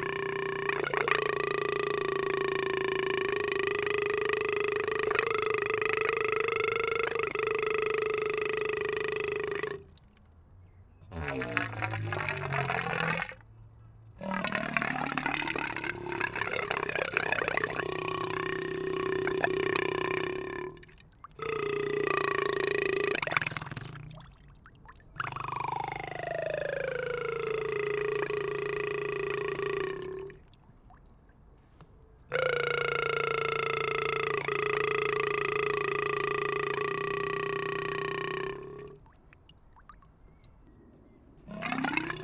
København, Denmark - Crazy water tap
A water tap is speaking to us, talking with incredible words everytime we want to drink. We play with it during five minutes. Some passers are laughing with the sound.